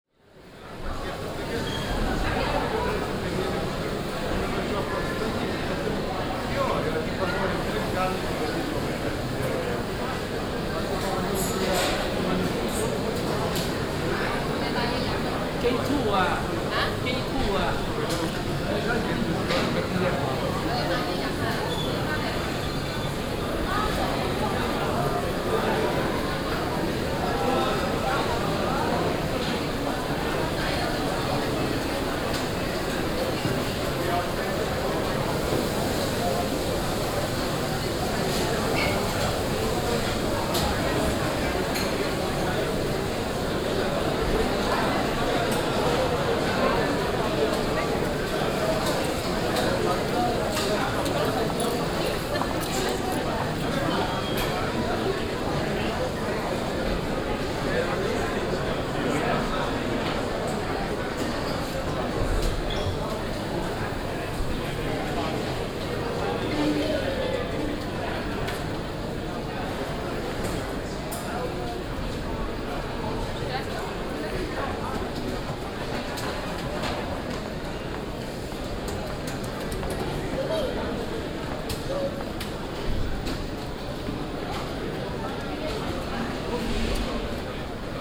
Flight Forum, Eindhoven, Nederland - Eindhoven Airport
Waiting in the boarding area.
Binaural recording.
Eindhoven, Netherlands, August 2015